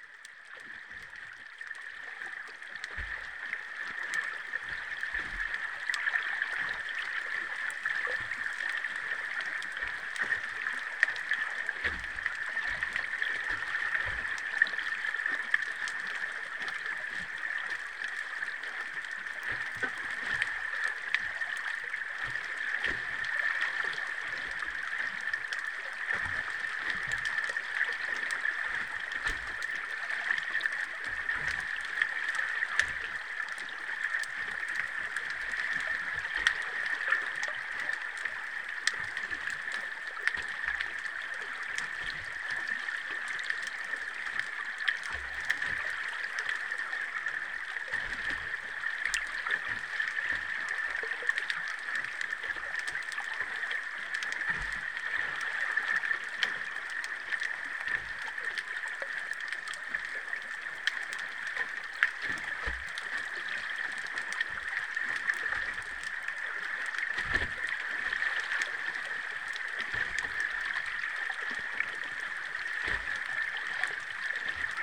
hydrophones drowned in windy sea